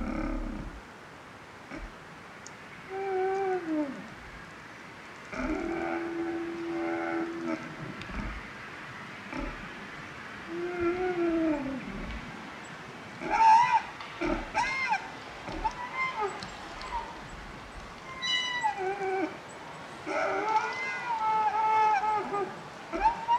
Lithuania, Uzpaliai, singing tree in a wind
another singing tree in a wind
10 April 2011, 17:00